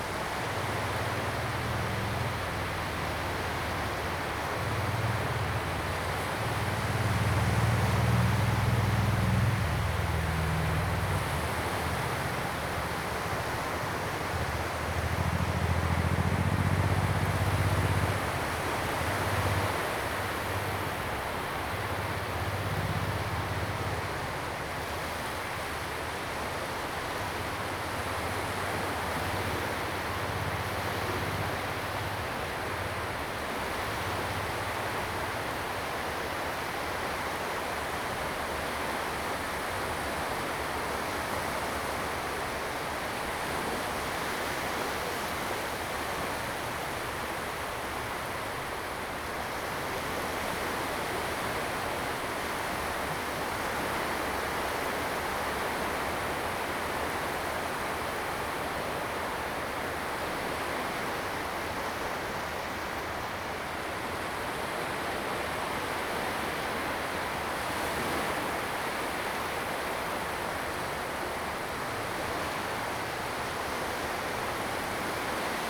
Shalun Beach, Tamsui Dist. - At the beach
At the beach, the waves
Zoom H2n MS+XY
New Taipei City, Taiwan, 4 April 2016